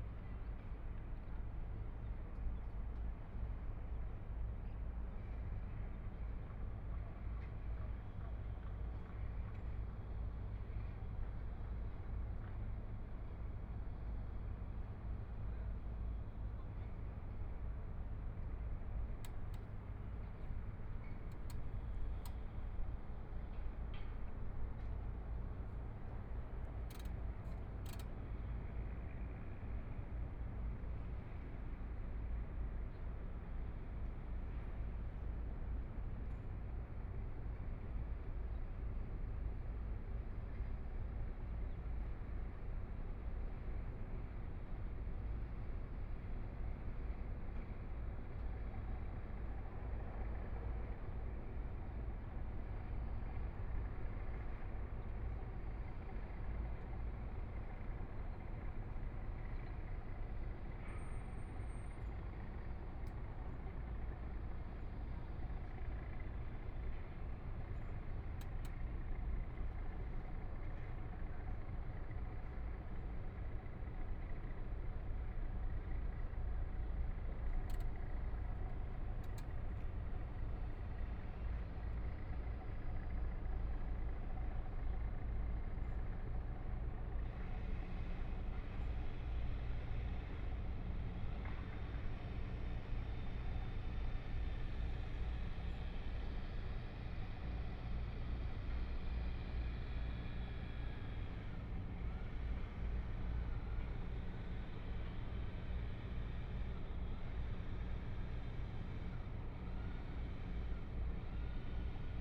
Power Station of Art, Shanghai - The top floor of the museum
Standing on the top floor of the museum platform, Construction site sounds, There are many boats traveling the river by, Binaural recording, Zoom H6+ Soundman OKM II